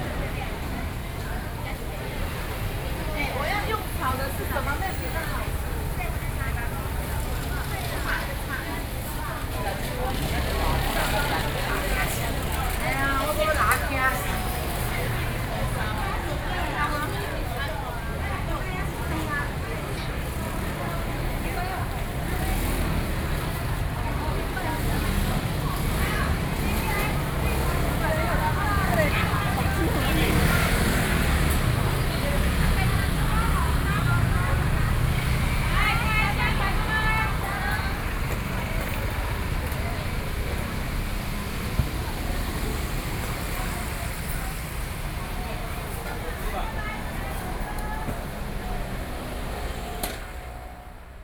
New Taipei City, Taiwan - Traditional markets